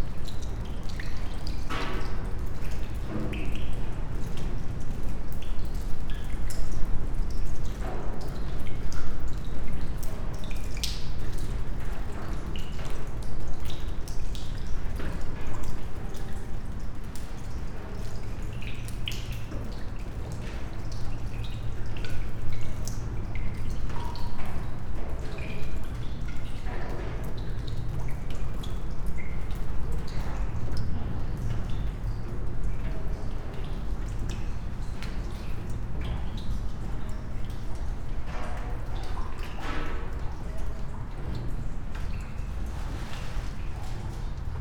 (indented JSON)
{
  "title": "Punto Franco Nord, house, Trieste, Italy - raindrops in the box 54",
  "date": "2013-09-11 15:51:00",
  "description": "raindrops poema with spoken words as first flow ... on one of the floors of abandoned house number 25 in old harbor of Trieste, silent winds",
  "latitude": "45.67",
  "longitude": "13.76",
  "altitude": "3",
  "timezone": "Europe/Rome"
}